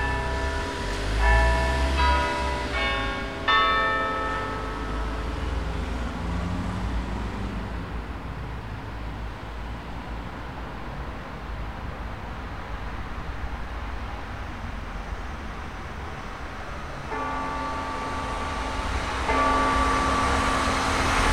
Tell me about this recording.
The bells of Lancaster Town Hall. Recorded using the built-in microphones of a Tascam DR-40 in coincident pair with windshield.